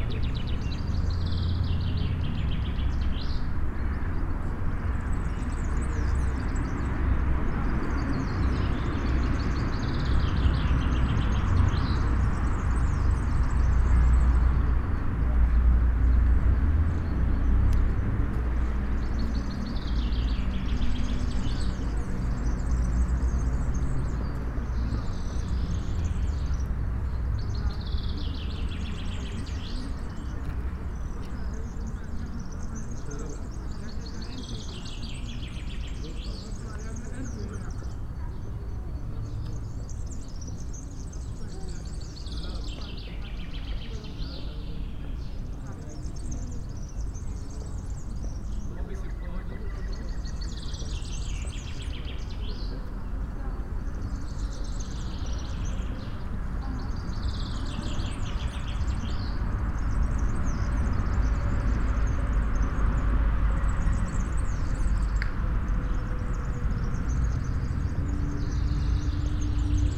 Bôrický park, Žilina, Slovensko
Just another corona saturday afternoon in city park.